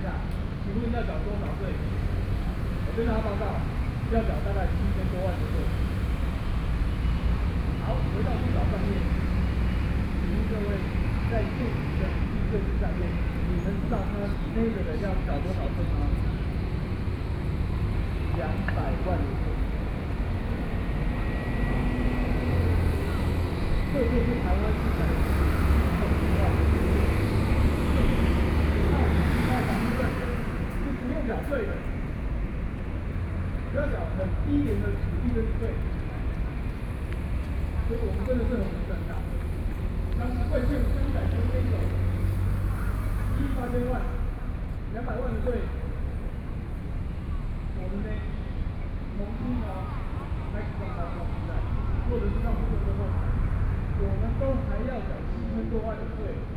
Zhongshan S. Rd., Zhongzheng Dist., Taipei City - Protest
Civic groups are speeches, Traffic Noise, Sony PCM D50 + Soundman OKM II